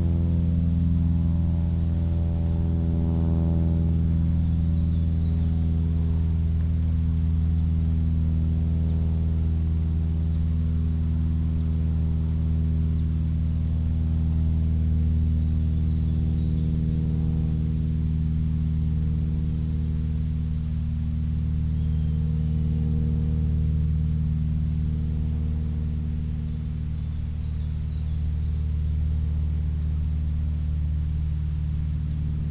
Grass Lake Sanctuary - Nature Trail
Sitting on this nature trail, listening to the sounds of birds and bugs come and go.